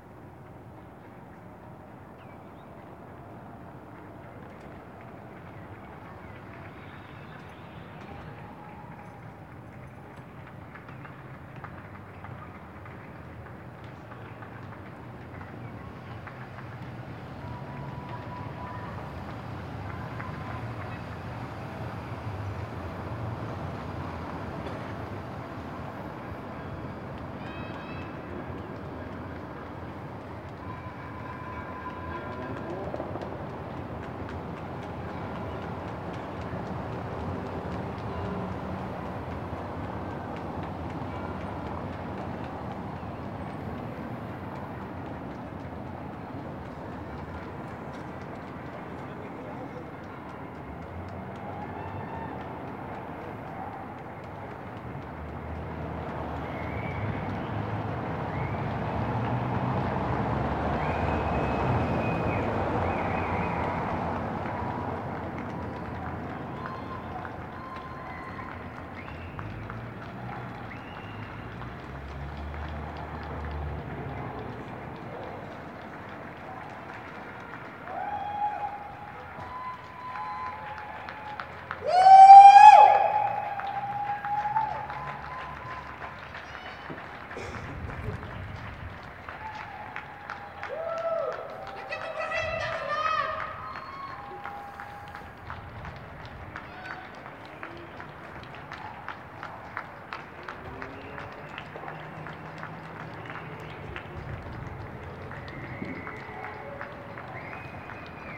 First Berlin wide call (I think) to give doctors, nurses, etc. a supportive applause from everyone.
Recorded from my balcony with Sony PCM D100.